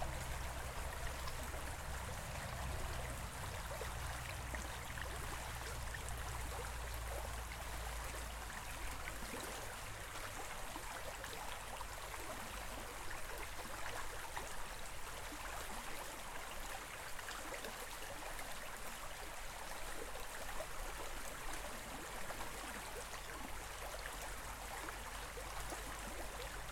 Among the trees along the gently flowing Caol stream behind the Russagh Mill Hostel, Skibbereen.
Recorded onto a Zoom H5 with an Audio Technica AT2022 on a Joby Gorillapod wrapped around a tree.